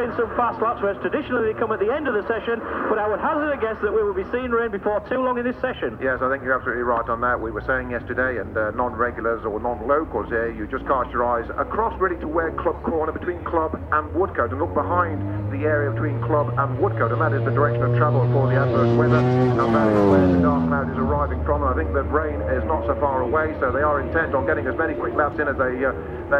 Silverstone Circuit, Towcester, UK - world superbikes 2002 ... qualifying ...
world superbikes 2002 ... qualifying ... one point stereo to sony minidisk ... commentary ... time approximate ... session may have been stopped for bad weather ...
East Midlands, England, UK, 25 June 2002